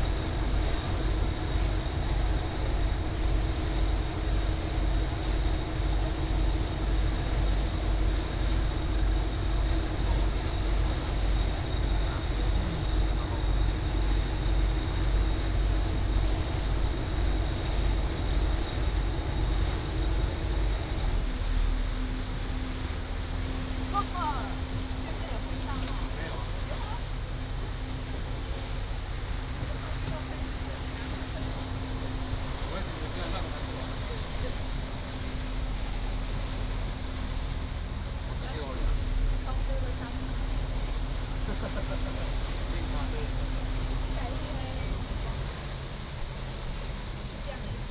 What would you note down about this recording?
On a yacht, Soon arrived at the pier